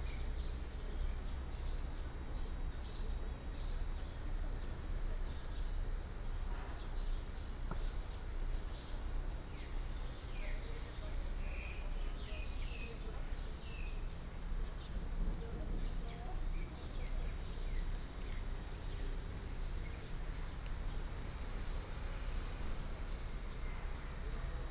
At the station platform, Birds call, Station Message Broadcast, The train arrives